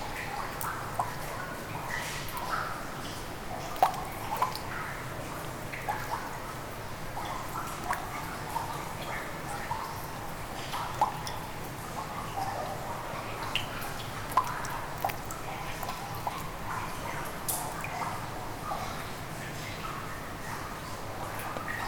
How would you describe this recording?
In an underground mine, sound of water spilled in the slope, and drops in a puddle.